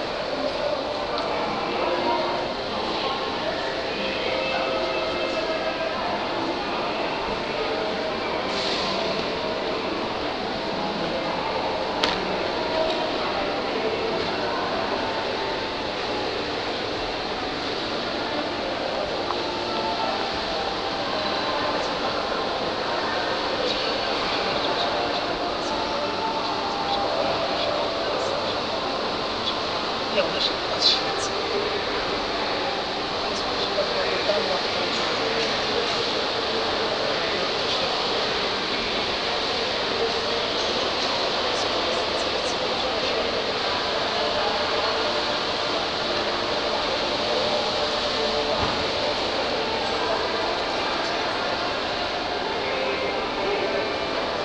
Galaxy Shopping Center, Szczecin, Poland
Galaxy Shopping Center
27 September, 11:41